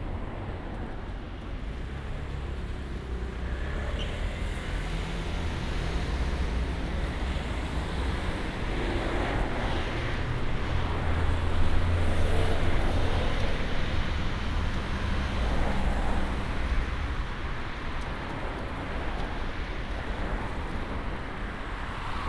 Ascolto il tuo cuore, città. I listen to your heart, city. Several chapters **SCROLL DOWN FOR ALL RECORDINGS** - Round Noon bells on November 7th, Saturday in the time of COVID19 Soundwalk
"Round Noon bells on November 7th, Saturday in the time of COVID19" Soundwalk
Chapter CXXXIX of Ascolto il tuo cuore, città. I listen to your heart, city
Saturday, November 7th, 2020, San Salvario district Turin, walking to Corso Vittorio Emanuele II and back, crossing Piazza Madama Cristina market; first day of new restrictive disposition due to the epidemic of COVID19.
Start at 11:50 a.m. end at 00:17 p.m. duration of recording 27’19”
The entire path is associated with a synchronized GPS track recorded in the (kmz, kml, gpx) files downloadable here: